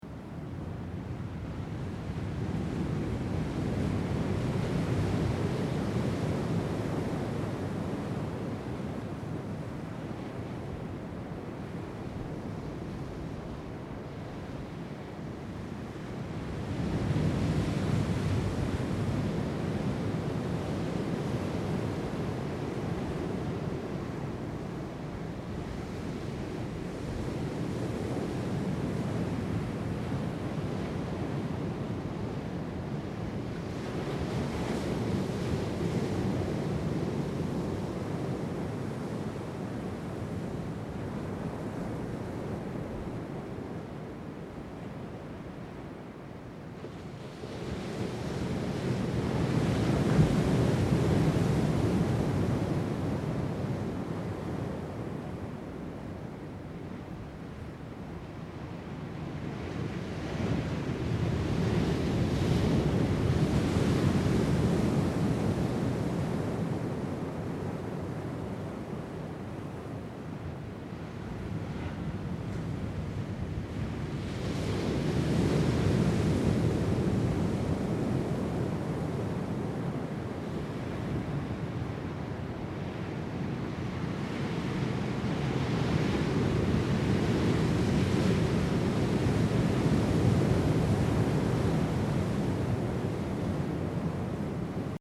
Oceano, estuario do Douro, Porto, Portugal Mapa Sonoro do Rio Douro Atlantic Ocean, Douros estuary, Portugal Douro River Sound Map